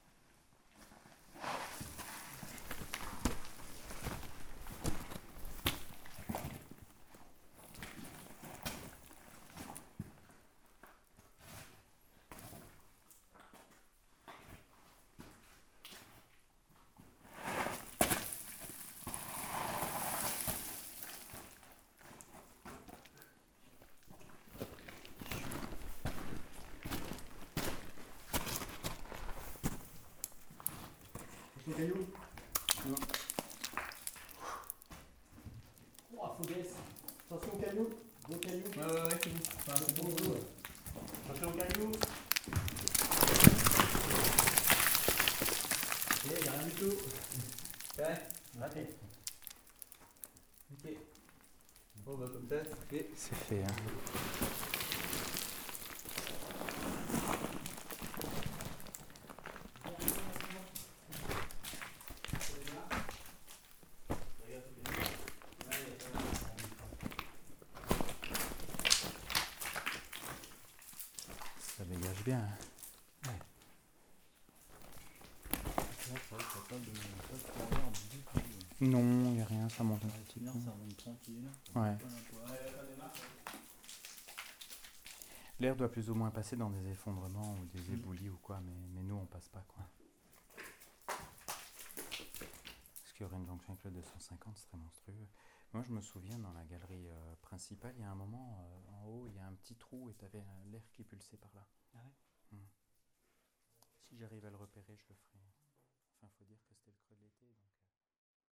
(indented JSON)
{
  "title": "Saint-Martin Le Vinoux, France - Cement mine",
  "date": "2017-03-26 10:15:00",
  "description": "We are exploring an underground cement mine. Especially, we are trying to reach an upper level, using a dangerous chimney. Small cements rocks are falling from everywhere.",
  "latitude": "45.20",
  "longitude": "5.72",
  "altitude": "311",
  "timezone": "Europe/Paris"
}